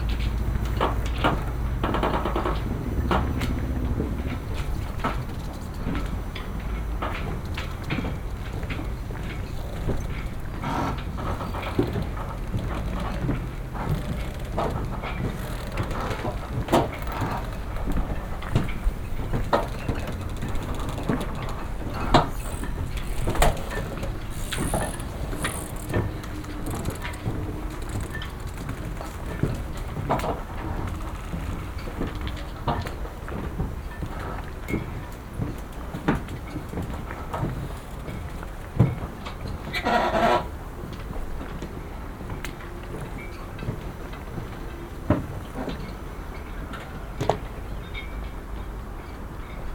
Marina Deportiva del Puerto de Alicante, Alicante, Spain - (21 BI) Boats creaking and crackling + RF interferences
Boats squeaking, creaking, and crackling with parts of interesting RF interferences.
binaural recording with Soundman OKM + Zoom H2n
sound posted by Katarzyna Trzeciak